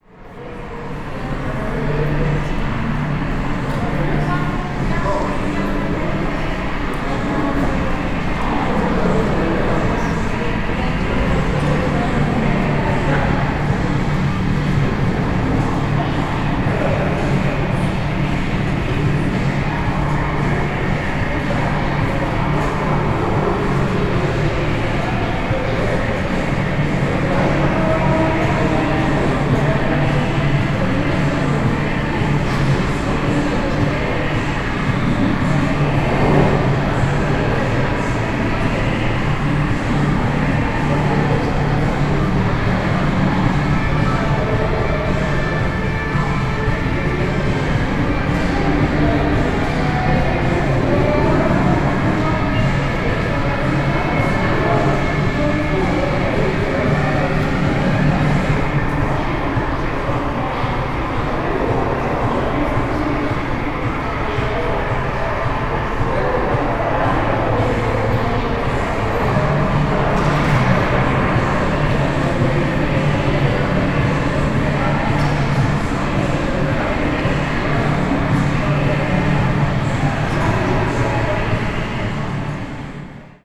Manuel J. Clouthier, Punta Campestre, León, Gto., Mexico - A la entrada del gimnasio Sport City.
At the entrance of the Sport City gym.
I made this recording on april 23rd, 2022, at 2:45 p.m.
I used a Tascam DR-05X with its built-in microphones and a Tascam WS-11 windshield.
Original Recording:
Type: Stereo
Esta grabación la hice el 23 de abril de 2022 a las 14:45 horas.